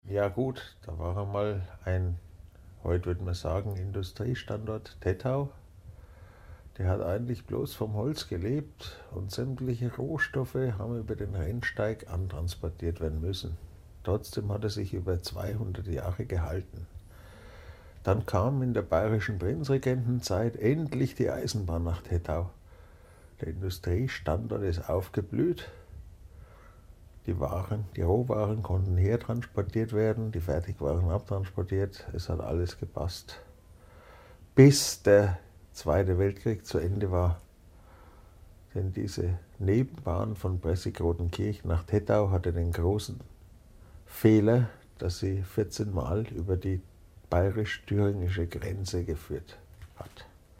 bei tettau - im tettautal
Produktion: Deutschlandradio Kultur/Norddeutscher Rundfunk 2009